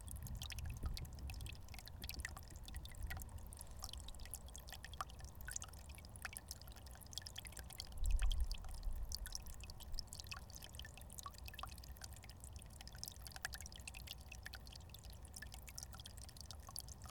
Murray's Passage, Minstead, UK - 043 Brook